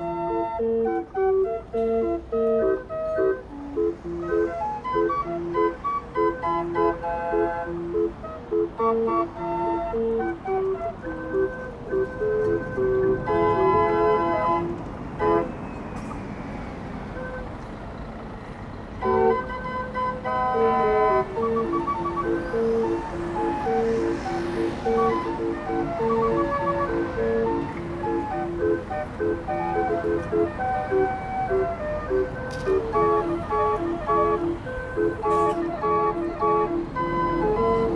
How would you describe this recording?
Turned by the hand of a woman in traditional dress.